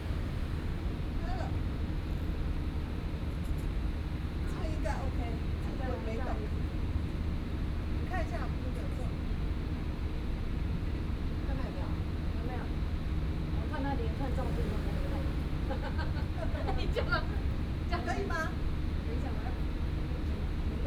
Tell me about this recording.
in the Park, Several older people taking pictures, air conditioning Sound